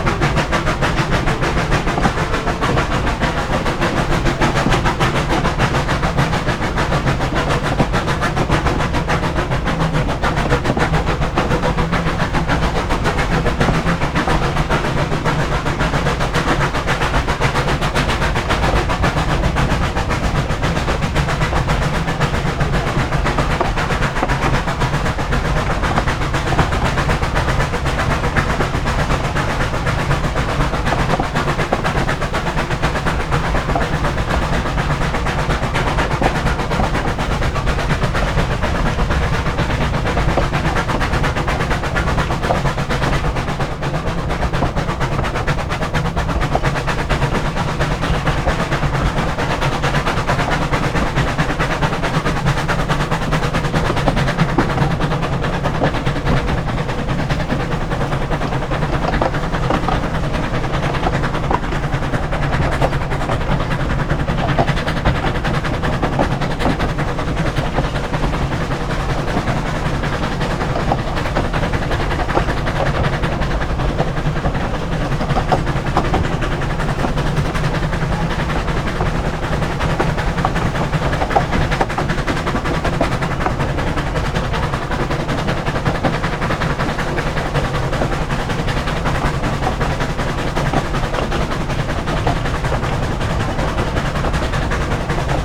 Steam Train Climbs to Torpantau - Brecon Mountain Railway, Merthyr Tydfil, Wales, UK
A ride on the preserved narrow steam train as it climbs up to the lonely station at Torpantau in The Brecon Beacons National Park. Recorded with a Sound Device Mix Pre 3 and 2 Senhheiser MKH 8020s while standing on the front observation platform of the first coach immediately behind the engine.